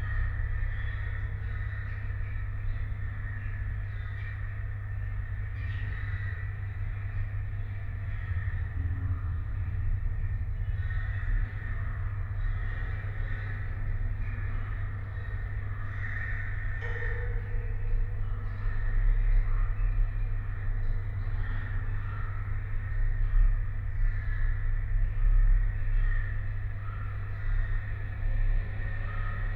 {"title": "Utena, Lithuania, metalic fence", "date": "2014-03-27 18:10:00", "description": "contact microphones on metalic fence surrounding construction zone. almost windless evening. litle pine forest with hundreds of crows is near. on the other side - a street and it's transport drone", "latitude": "55.51", "longitude": "25.60", "altitude": "111", "timezone": "Europe/Vilnius"}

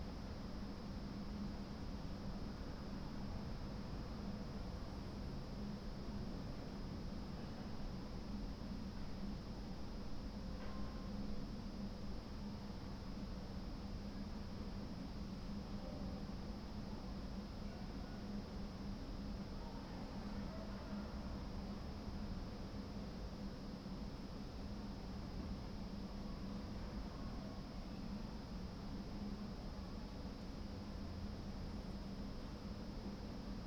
{"title": "Ascolto il tuo cuore, città, I listen to your heart, city. Several chapters **SCROLL DOWN FOR ALL RECORDINGS** - Windy afternoon on terrace in the time of COVID19: soundscape.", "date": "2021-01-14 13:40:00", "description": "\"Windy afternoon on terrace in the time of COVID19\": soundscape.\nChapter CLIII of Ascolto il tuo cuore, città. I listen to your heart, city\nThursday January 14th 2021. Fixed position on an internal terrace at San Salvario district Turin, more then nine weeks of new restrictive disposition due to the epidemic of COVID19.\nStart at 01:40 p.m. end at 02:03 p.m. duration of recording 33’05”", "latitude": "45.06", "longitude": "7.69", "altitude": "245", "timezone": "Europe/Rome"}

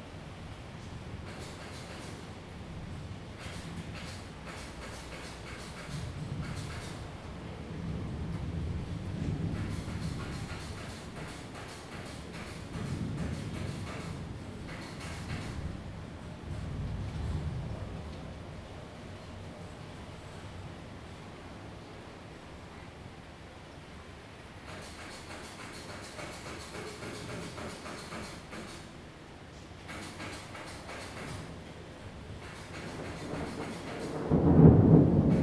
{"title": "Rende 2nd Rd., Bade Dist. - thunderstorm", "date": "2017-07-06 16:24:00", "description": "Thunderstorms, The sound of woodworking construction\nZoom H2n MS+XY+ Spatial audio", "latitude": "24.94", "longitude": "121.29", "altitude": "141", "timezone": "Asia/Taipei"}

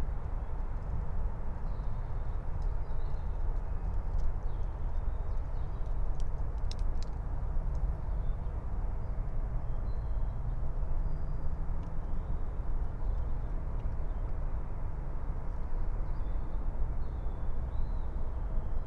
{"title": "Friedhof Columbiadamm, Berlin - cemetery ambience", "date": "2021-03-31 05:00:00", "description": "05:00 early morning Friedhof Columbiadamm, Berlin, traffic drone, an owl, first birds.\n(remote microphone: PUI AOM 5024 / IQAudio/ RasPi Zero/ 4G modem)", "latitude": "52.48", "longitude": "13.41", "altitude": "51", "timezone": "Europe/Berlin"}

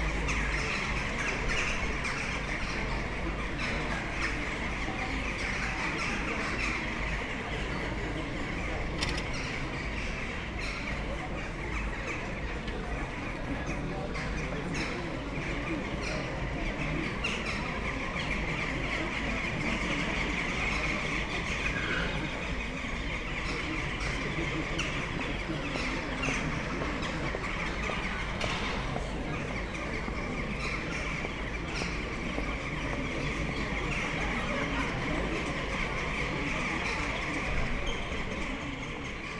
{"title": "Place de l'Hôtel de ville, Aix-en-Provence - evening ambience, jackdaws", "date": "2014-01-08 18:05:00", "description": "buzz and hum of people sitting outside bars at Place de l'Hôtel de ville, Aix-en-Provence. a big bunch of excited jackdaws in the trees.\n(PCM D50, EM172)", "latitude": "43.53", "longitude": "5.45", "altitude": "209", "timezone": "Europe/Paris"}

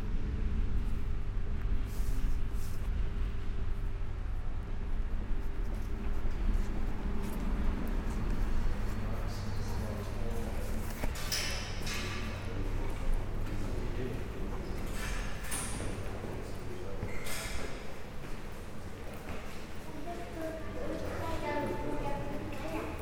{"title": "Dinant, Belgium - Dinant station", "date": "2017-09-29 13:05:00", "description": "Dinant is a small beautiful very touristic place. But, also, its a dead town, a dead zone, and the railway station is a fucking dead end station. Trains are rare, people look depressed, turnkey is rude, its raining since early on the morning. Are we in a rat hole ? In this recording, nothings happening. People wait, no train comes, noisy tourists arrive, a freight train passes. Everything look like boring, oh what a sad place...", "latitude": "50.26", "longitude": "4.91", "altitude": "97", "timezone": "Europe/Brussels"}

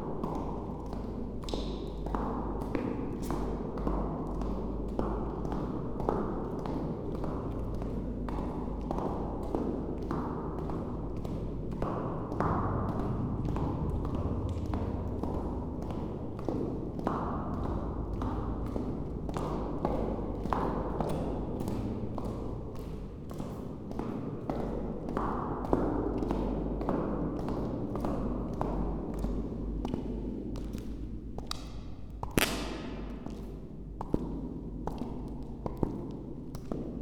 {"title": "chamber cistern, wine cellar, Maribor - walking echo, louder and later quieter", "date": "2014-10-21 13:23:00", "latitude": "46.56", "longitude": "15.65", "altitude": "274", "timezone": "Europe/Ljubljana"}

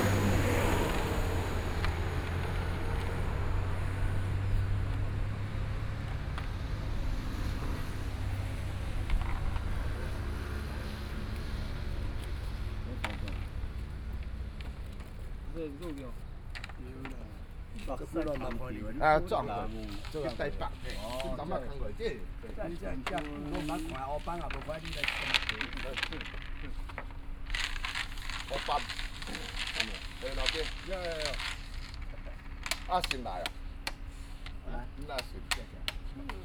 {"title": "羅東運動公園, Luodong Township - Under the tree", "date": "2014-07-27 12:53:00", "description": "Under the tree, Hot weather, Traffic Sound, A group of people playing chess\nSony PCM D50+ Soundman OKM II", "latitude": "24.68", "longitude": "121.76", "altitude": "10", "timezone": "Asia/Taipei"}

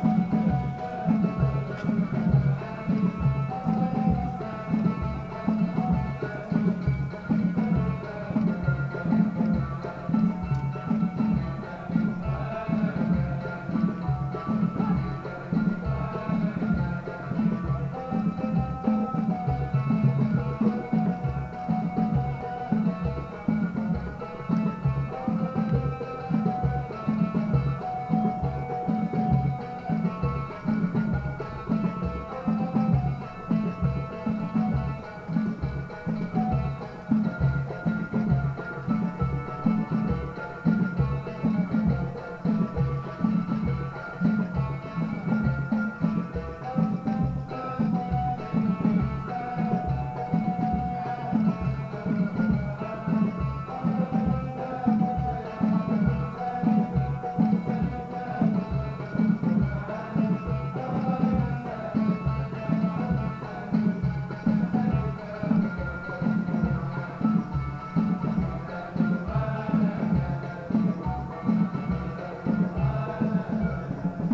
{"title": "Madkhal Meski, Morocco - Berber music at night", "date": "2018-01-05 22:48:00", "description": "Distant Berber music band and night atmosphere (dogs on the right). Click on mic at 7m45\nGroupe de musique berbère, lointain. Ambiance de nuit (chiens sur la droite). “Click” sur le micro à 7m45", "latitude": "31.86", "longitude": "-4.28", "altitude": "972", "timezone": "GMT+1"}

